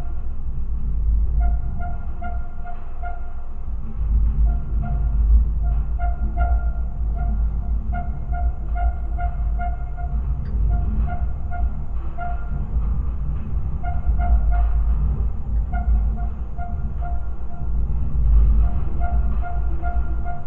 Antalgė, Lithuania, sculpture Travelling Pot Making Machine
Open air sculpture park in Antalge village. There is a large exposition of metal sculptures and instaliations. Now you can visit and listen art. Multichannel recording using geophone, contact mics, elecytomagnetic antenna Ether.
Utenos rajono savivaldybė, Utenos apskritis, Lietuva, 24 July 2020